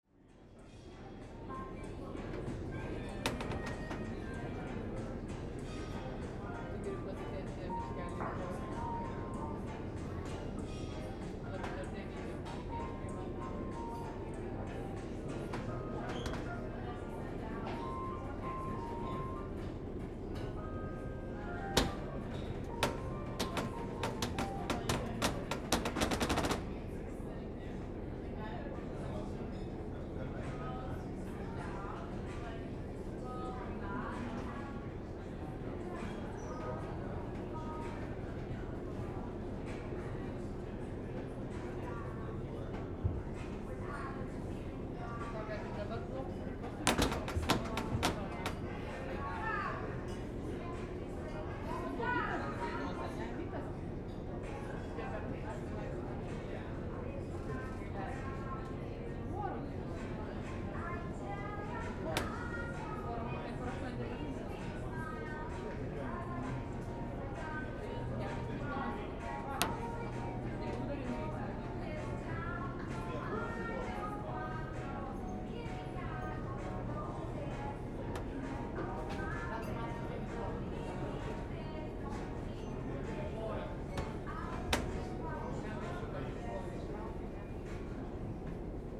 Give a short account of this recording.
the restourant Milky Way is arranged at Vilnius TV tower in 165 meters height. It has a slowly spinning floor, so visitors can observe a panorama of the city. Cracking sounds comes from floor spinning